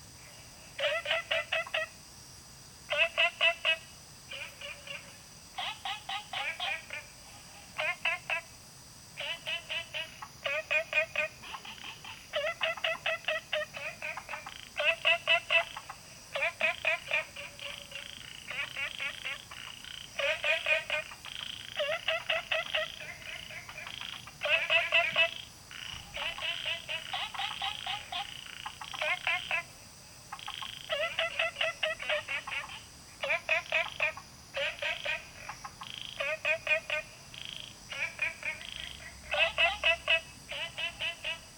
青蛙阿婆家, Taomi Ln., Puli Township - Different kinds of frogs chirping
Frog chirping, Many species of frogs, Insect sounds
Zoom H2n MS+XY
11 August 2015, ~21:00